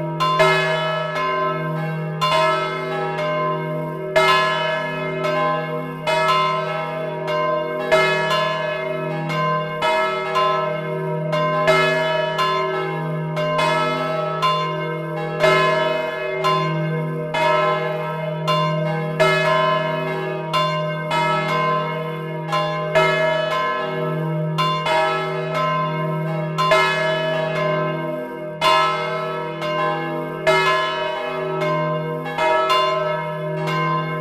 Court-St.-Étienne, Belgique - Les cloches
Recording of the Court-St-Etienne bells, inside the bell tower.
Court-St.-Étienne, Belgium, 12 July 2014, 19:00